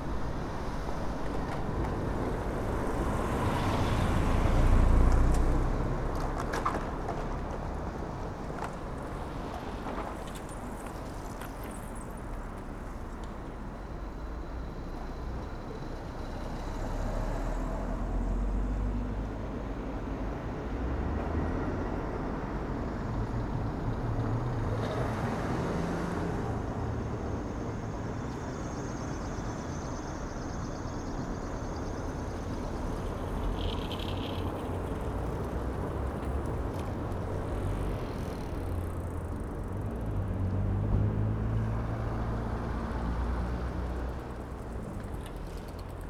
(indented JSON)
{
  "title": "Berlin: Vermessungspunkt Maybachufer / Bürknerstraße - Klangvermessung Kreuzkölln ::: 19.12.2011 ::: 19:03",
  "date": "2011-12-19 19:03:00",
  "latitude": "52.49",
  "longitude": "13.43",
  "altitude": "39",
  "timezone": "Europe/Berlin"
}